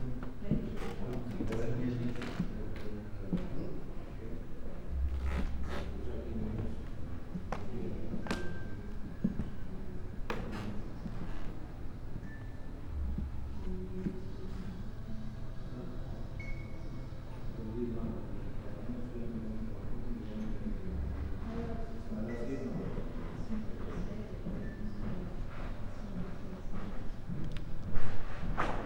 walk, wooden floor and sonic scape at Museen Dahlem, "Probebühne 1", small talks
Berlin, Deutschland, European Union, 19 May 2013